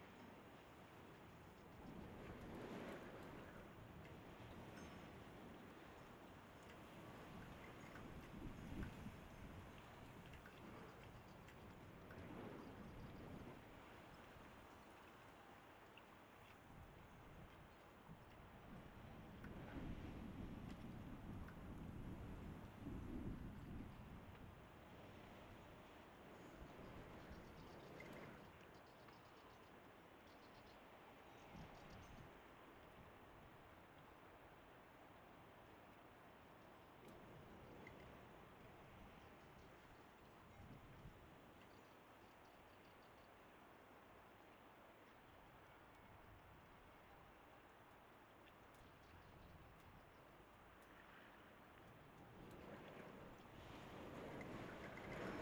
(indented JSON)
{
  "title": "Patmos, Liginou, Griechenland - Vor dem Haus",
  "date": "2004-10-05 16:49:00",
  "description": "Ich stehe im Windschatten, vor dem Haus.",
  "latitude": "37.35",
  "longitude": "26.58",
  "altitude": "23",
  "timezone": "GMT+1"
}